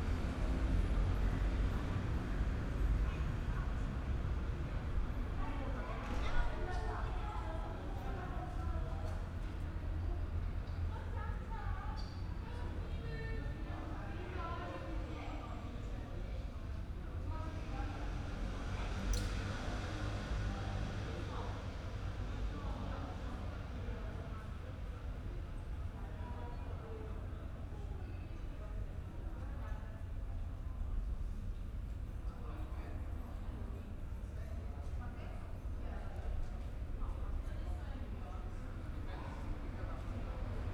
night ambience at Via di Cavana, all shops and cafes are closed.
(SD702, DPA4060)
Trieste, Italy, September 2013